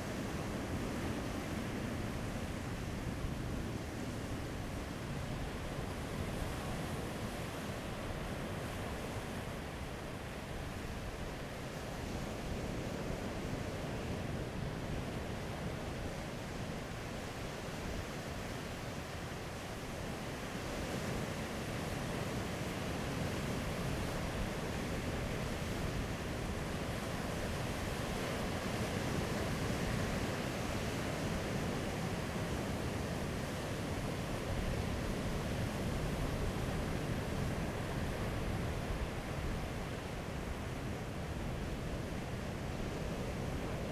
At the seaside, Sound of the waves, Very hot weather
Zoom H2n MS+ XY